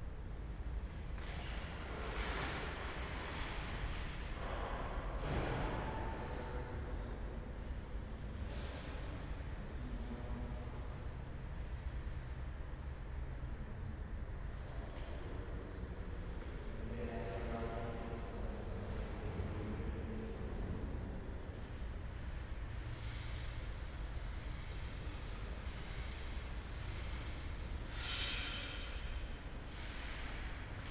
Muzeum hlavniho mesta Prahy
Cracking wooden floor at the Municipal Prague Museum. The museum was almost empty.